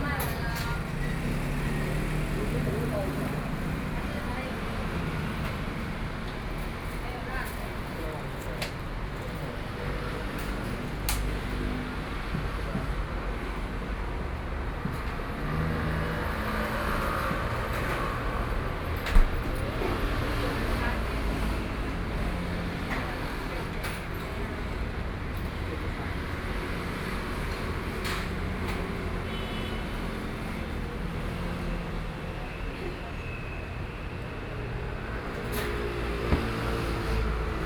Beitou, Taipei - At the restaurant
At the restaurant entrance, Ordering, Traffic Noise, Binaural recordings, Sony PCM D50 + Soundman OKM II